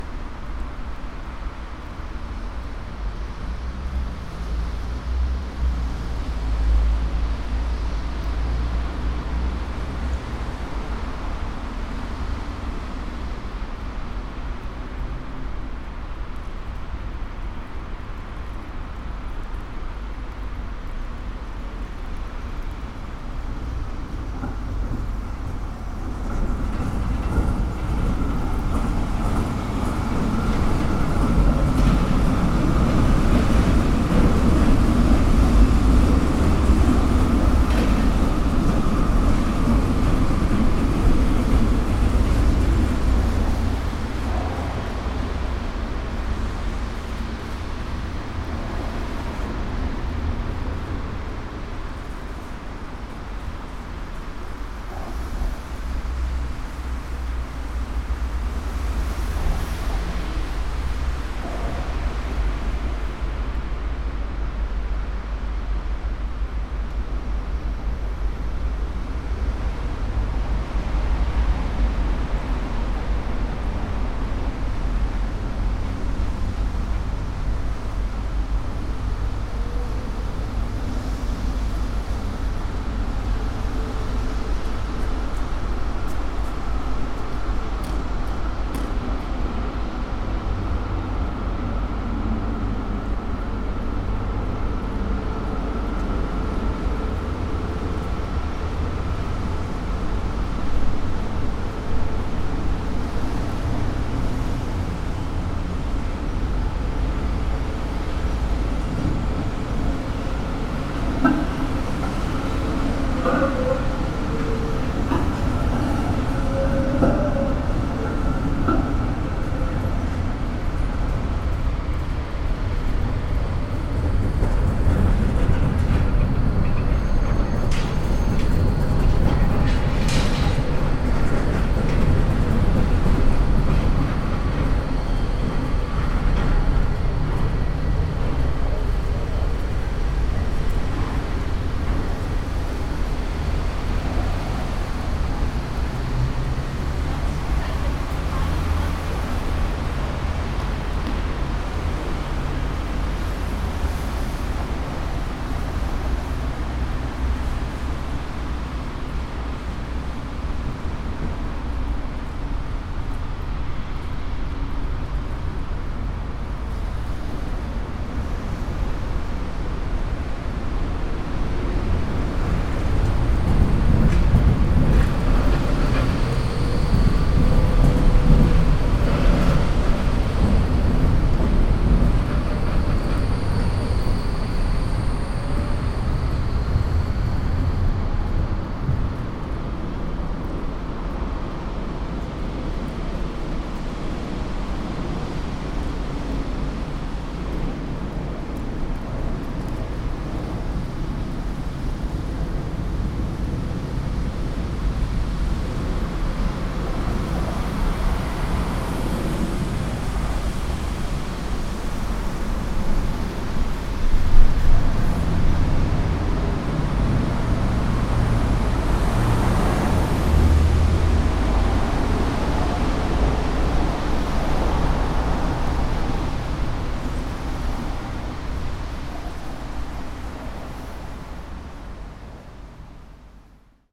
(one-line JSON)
{"title": "Zürich West, Schweiz - Escher-Wyss-Platz", "date": "2014-12-31 12:00:00", "description": "Escher-Wyss-Platz, Zürich West", "latitude": "47.39", "longitude": "8.52", "altitude": "405", "timezone": "Europe/Zurich"}